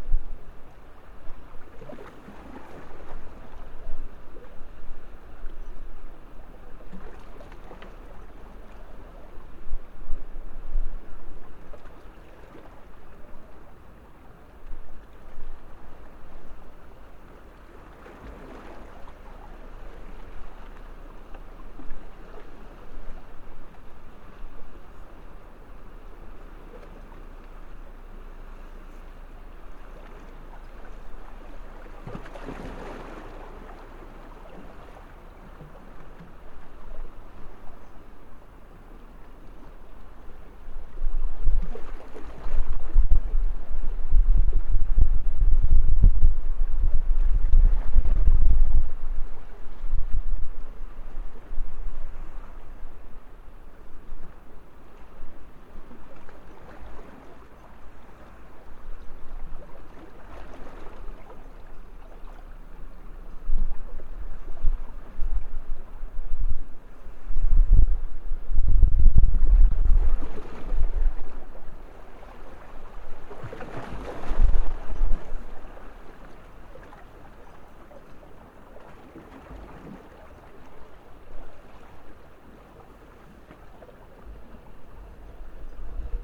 water, port andratx
sea-water near the harbour of port andratx